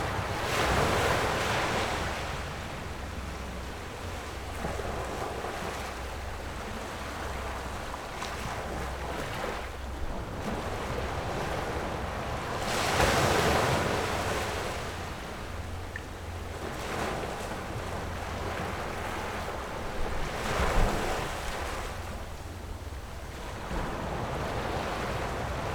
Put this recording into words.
In the dock, Windy, Tide, Zoom H6 +Rode NT4